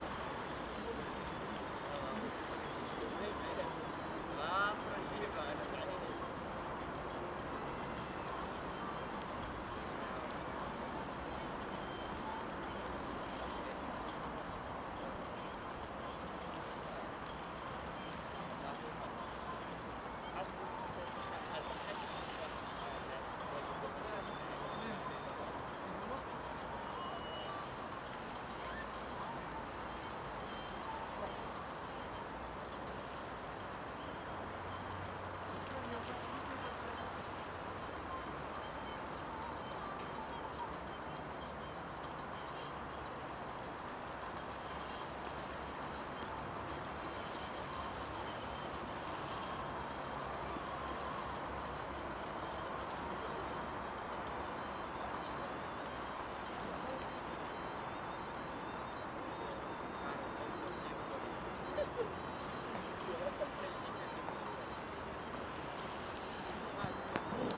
Sint-Joost-ten-Node, België - Atmosphere of Botanique
You hear music played from a mobile phone from some guys who are hanging on the stairs in Botanique